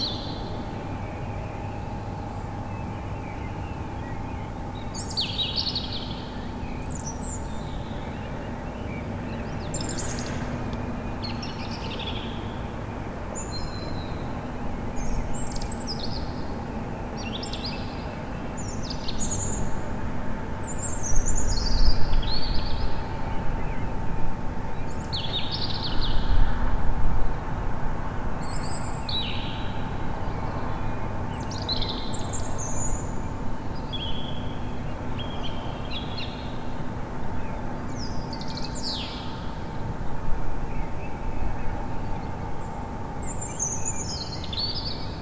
R. Actriz Palmira Bastos, Lisboa, Portugal - Spring will arrive, early bird activity (2)
birds from the window, before sunrise
some machinery is heard also
Grande Lisboa, Área Metropolitana de Lisboa, Portugal, 16 February 2021